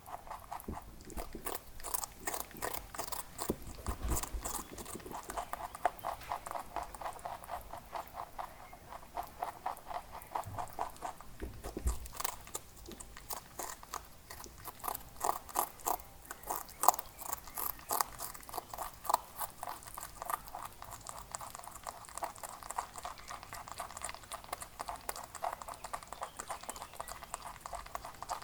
Court-St.-Étienne, Belgique - Rabbit eating
Clovis the rabbit is eating some carrots.